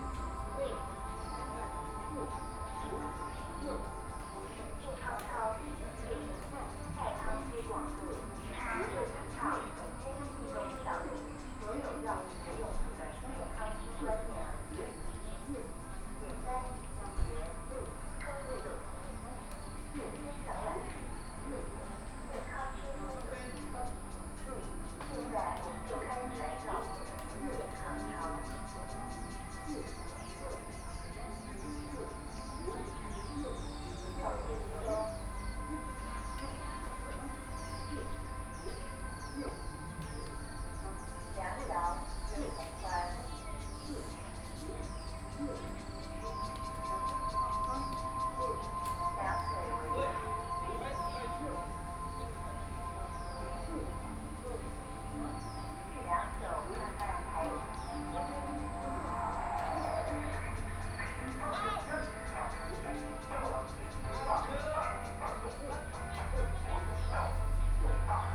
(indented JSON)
{
  "title": "忠烈祠, Hualien City - Morning and sports",
  "date": "2014-08-29 06:41:00",
  "description": "Birdsong, Morning at Park, Many older people are sports and chat\nBinaural recordings",
  "latitude": "23.99",
  "longitude": "121.61",
  "altitude": "22",
  "timezone": "Asia/Taipei"
}